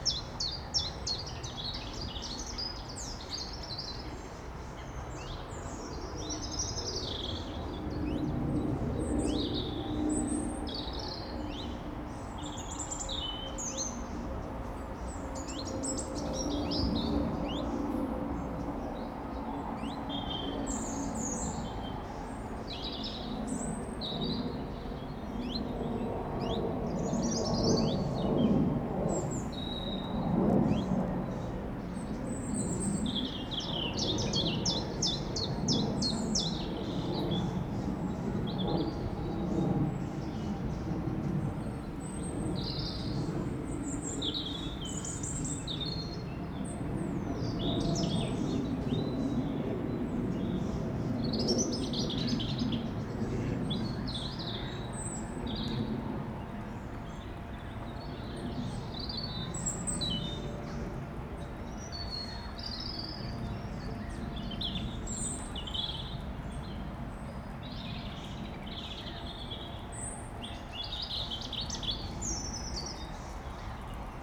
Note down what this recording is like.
This lovely bit of land by the river will be turned into the East Reading Mass Rapid Transit (MRT) scheme. Sony M10 Rode VideoMicProX